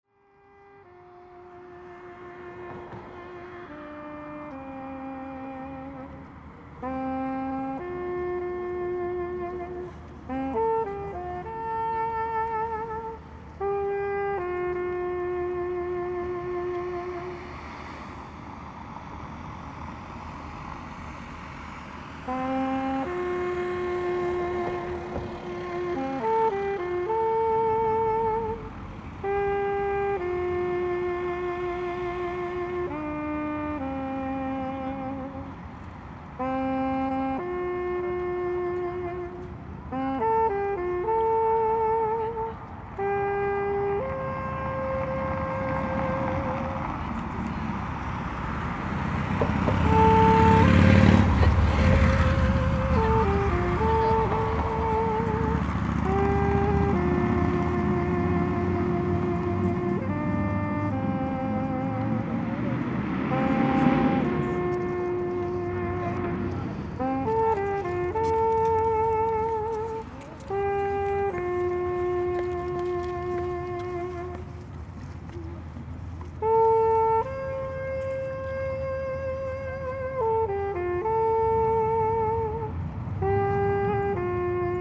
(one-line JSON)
{"title": "Hamburg, Deutschland - Street musician", "date": "2019-04-19 10:45:00", "description": "A bad street musician playing in a noisy street.", "latitude": "53.54", "longitude": "9.98", "altitude": "1", "timezone": "Europe/Berlin"}